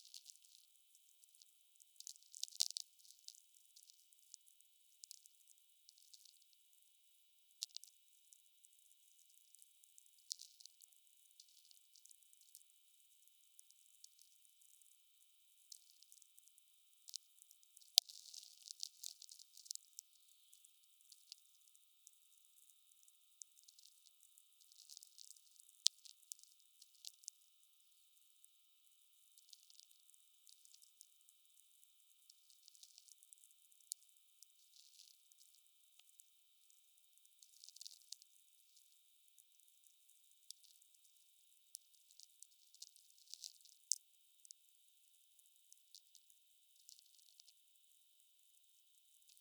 Lukniai, Lithuania, atmospheric radio (VLF)
VLF or atmospheric radio. distant lightnings received with handheld VLF receiver.